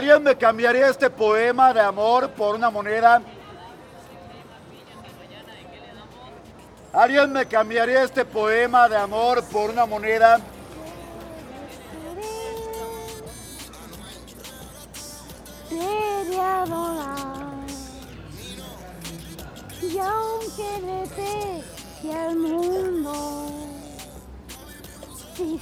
Av 2 Ote, Centro histórico de Puebla, Puebla, Pue., Mexique - Puebla - Mexique
Puebla - Mexique
Ambiance rue 5 de Mayo
Puebla, México